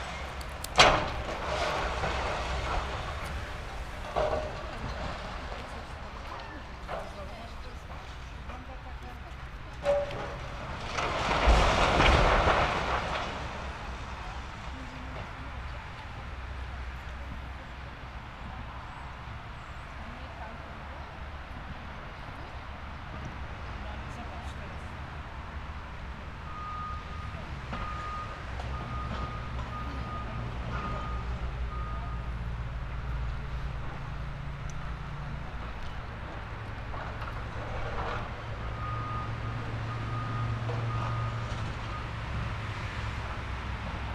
an excavator demolishing an old building, knocking over the walls with its bucket and crushing the rubble with its tracks.